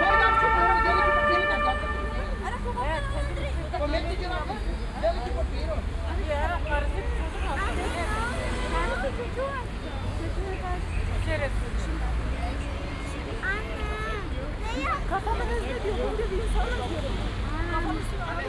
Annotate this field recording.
27.09.2008 16:45, kottbusser damm after skater marathon, huge traffic chaos, two drivers obviously just have had a fight, one is attacking a policemen, which causes him trouble...